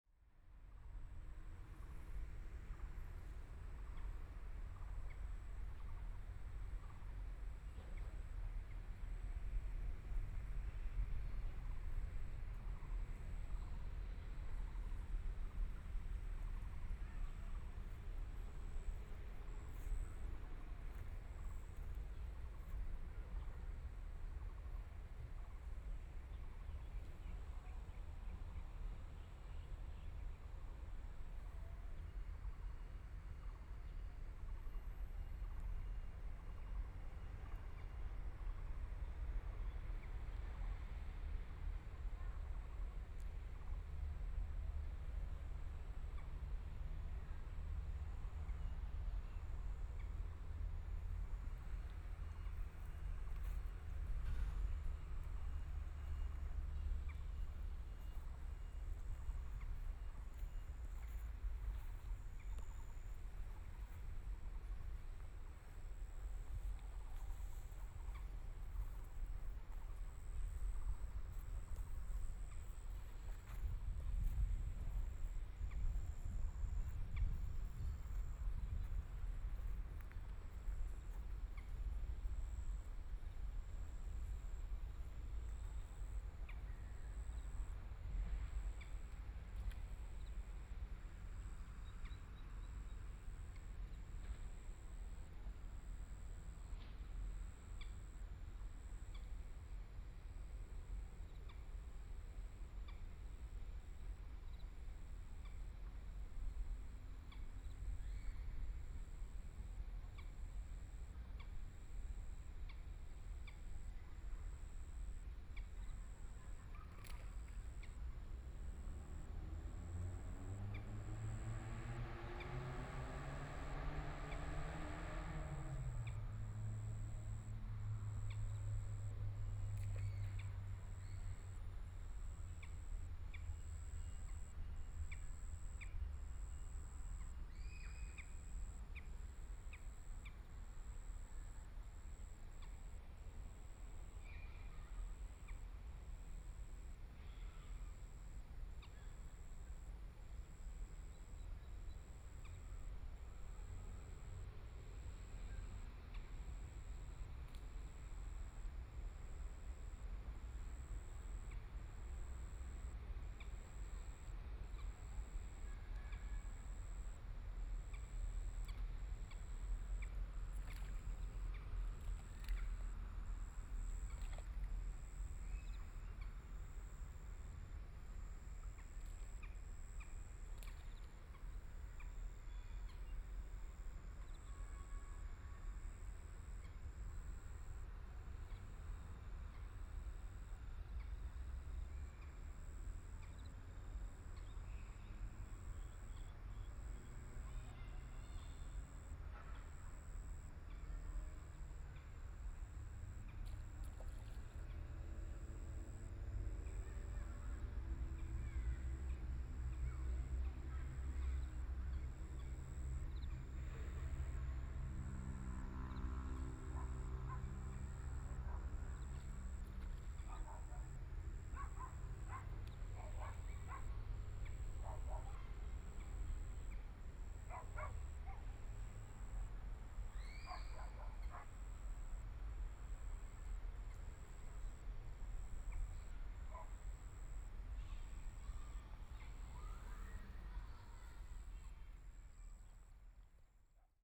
美崙溪, Hualien City - Next to River
Next to River, Environmental sounds
Binaural recordings
Zoom H4n+ Soundman OKM II
Hualian City, Hualien County, Taiwan, February 2014